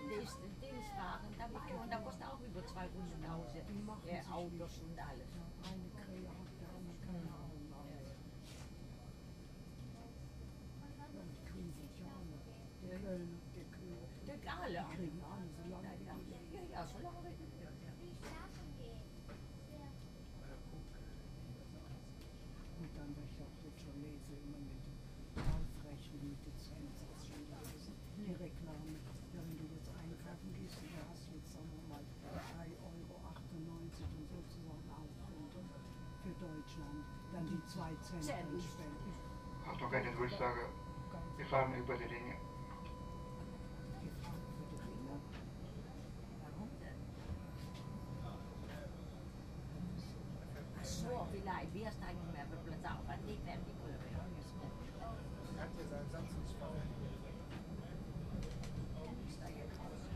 Neustadt-Nord, Köln, Deutschland - Eine Krähe hackt der anderen kein Auge aus
Two old ladys talk, complaining about neighbours who not clean the staircase properly and the former German president receiving a lifelong payment. Vontage Helge Schneider/Loriot.